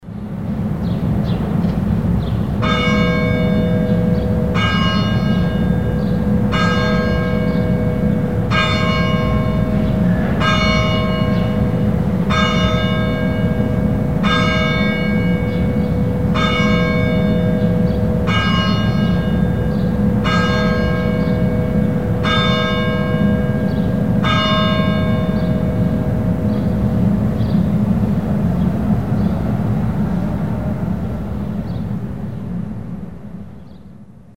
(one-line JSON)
{"title": "paris, chapelle sainte-marie, clock bell", "date": "2009-12-12 12:57:00", "description": "the hour clock of the church, recorded on the street. In the background a sonoric constant traffic noise\ninternational cityscapes - social ambiences and topographic field recordings", "latitude": "48.86", "longitude": "2.36", "altitude": "44", "timezone": "Europe/Berlin"}